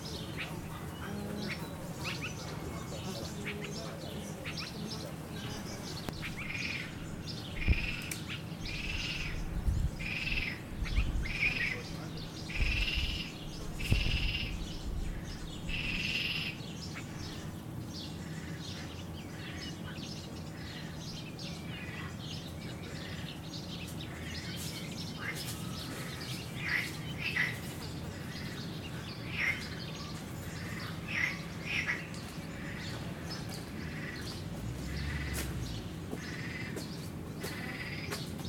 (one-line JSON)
{"title": "loading... - Jerusalem Botanical Gardens", "date": "2018-04-30 12:53:00", "description": "Birds and frogs at the Jerusalem Botanical Gardens", "latitude": "31.79", "longitude": "35.25", "altitude": "824", "timezone": "Asia/Jerusalem"}